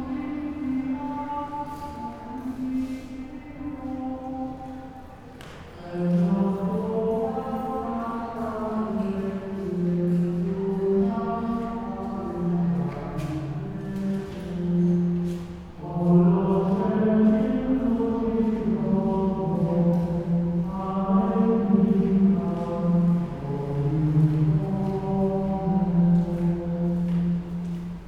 crkva gospe od karmela, novigrad, croatia - church sings at night
church with open doors at night, out and inside merge ...